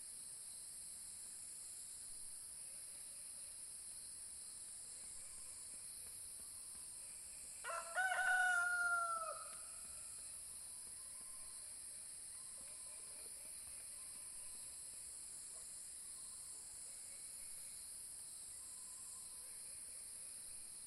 台灣南投縣埔里鎮成功里藏機閣安居樂活村 - The first sound every day
Song of the night of spotted frogs called early in the morning with only the sound of the rooster. In the possession of machine Court fixed time every day playing.
Puli Township, Nantou County, Taiwan